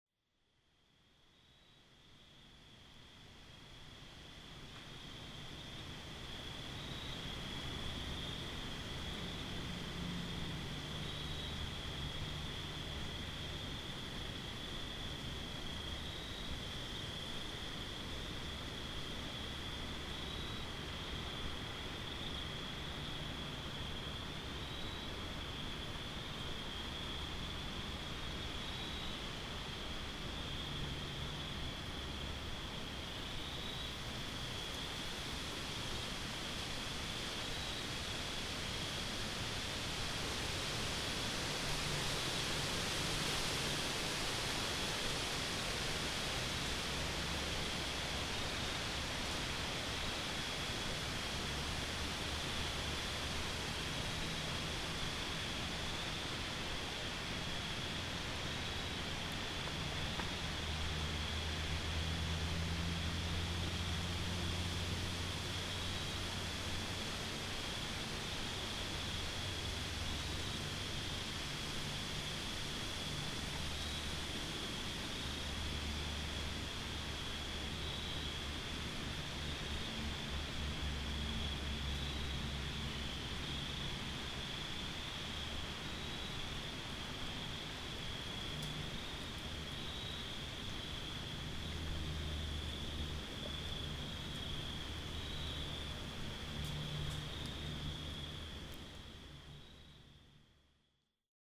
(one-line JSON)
{"title": "Turkey Cove Trail, Lost Bridge West State Recreation Area, Andrews, IN, USA - Night, wind in trees, Turkey Cove Trail, Lost Bridge West State Recreation Area", "date": "2020-10-17 19:45:00", "description": "Sounds heard on an evening hike, Turkey Cove Trail, Lost Bridge West State Recreation Area, Andrews, IN, USA. Part of an Indiana Arts in the Parks Soundscape workshop sponsored by the Indiana Arts Commission and the Indiana Department of Natural Resources.", "latitude": "40.77", "longitude": "-85.63", "altitude": "252", "timezone": "America/Indiana/Indianapolis"}